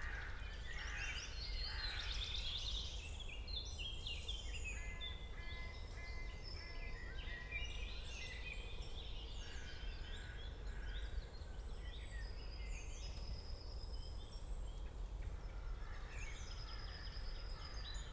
Königsheide, Berlin - spring morning ambience /w squirrel
at the pond, Königsheide Berlin, wanted to record the spring morning ambience, when a curious squirrel approached, inspecting the fluffy microfones, then dropping one down.
(Sony PCM D50, DPA4060)
Berlin, Germany, 21 May 2020, 06:45